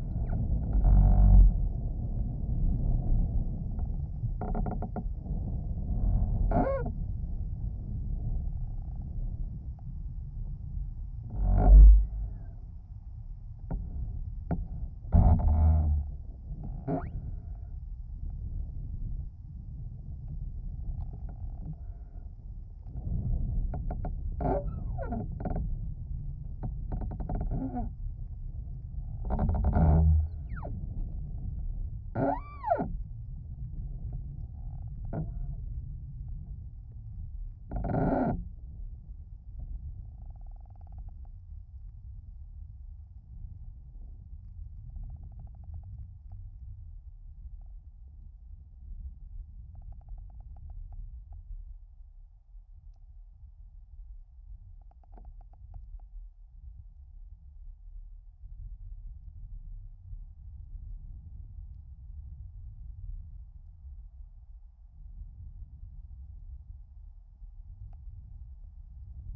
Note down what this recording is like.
The contact mics are simple self made piezos, but using TritonAudio BigAmp Piezo pre-amplifiers, which are very effective. They reveal bass frequencies that previously I had no idea were there.